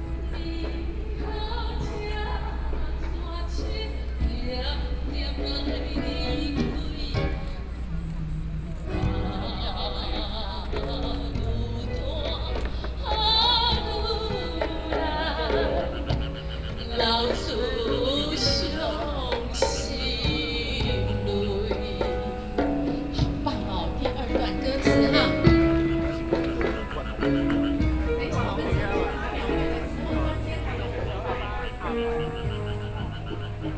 Beitou Park - Teach singing
Teach singing Taiwanese songs, Sony PCM D50 + Soundman OKM II